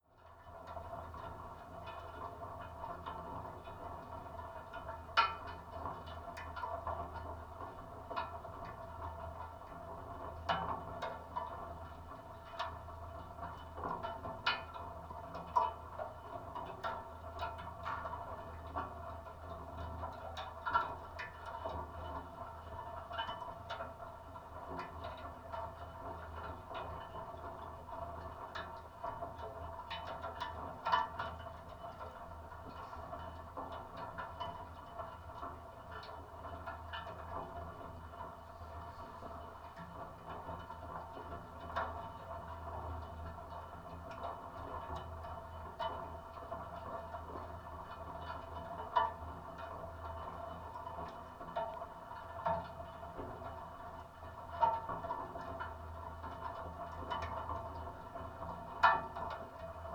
Netzow, Templin, Deutschland - iron furnace at work (contact)
(Sony PCM D50, DIY stereo contact mics)
17 December 2016, ~23:00